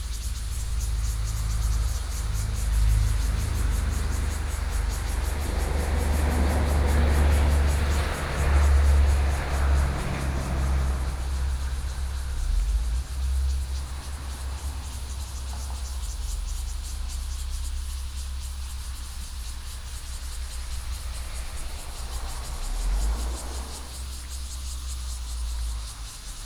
永安村, Luye Township - Cicadas sound
Cicadas sound, Birdsong, Traffic Sound